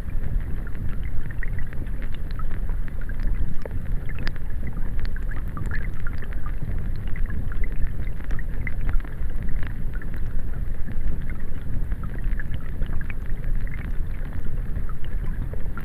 Joneliškės, Lithuania, river Viesa underwater
hydrophone in a stream of river Viesa